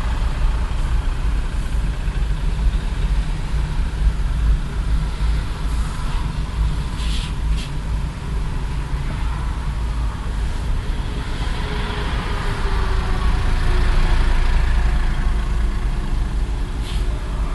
highway, next exit düren, traffic jam - highway A4, next exit düren
verkehrsgeräusche auf der A4 im nachmittags stau vor der ausfahrt düren
soundmap nrw: social ambiences/ listen to the people - in & outdoor nearfield